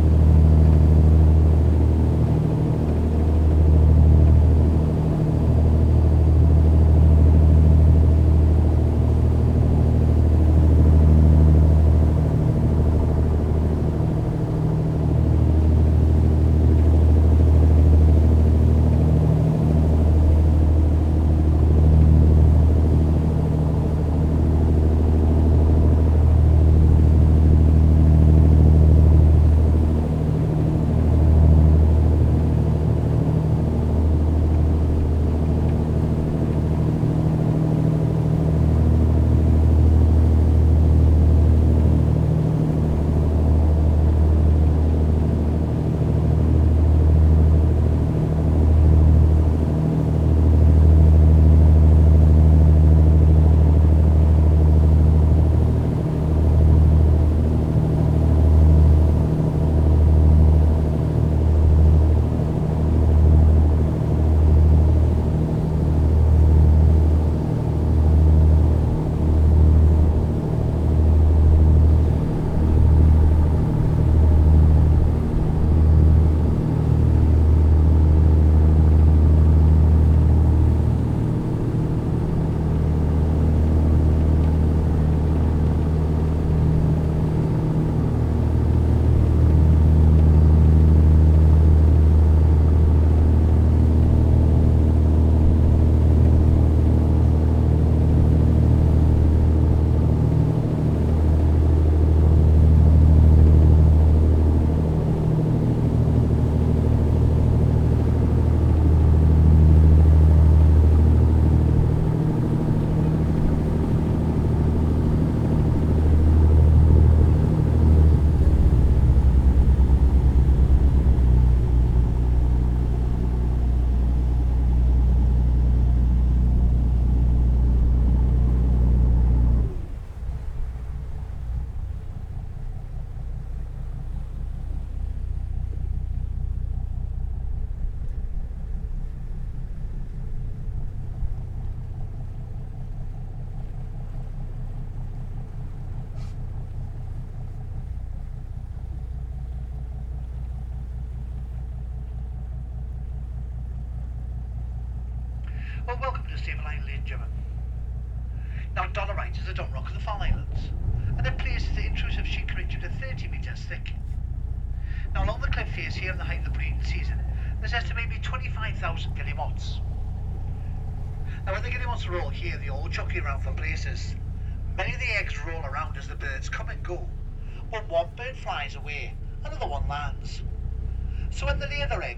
Farne Islands ... - Grey Seal Cruise ...
Grey seal cruise ... approaching Staple Island ... commentary about guillemots and grey seals ... background noise ... lavalier mics clipped to baseball cap ...
UK